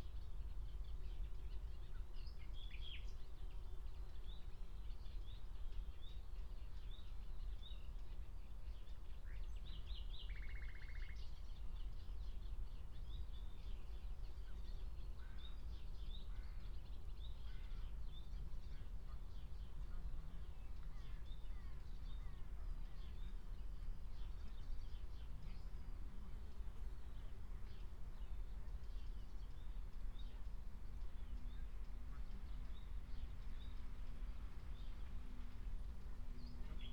Berlin, Tempelhofer Feld - former shooting range, ambience
10:00 Berlin, Tempelhofer Feld
Deutschland, 2 June 2020, 10:00